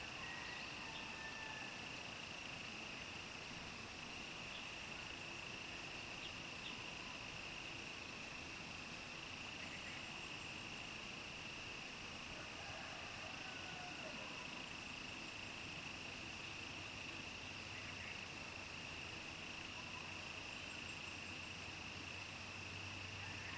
TaoMi Li., 桃米里 Puli Township - Early morning
Bird calls, Insect sounds, Early morning, Crowing sounds
Zoom H2n MS+XY
Puli Township, 桃米巷11-3號, April 2015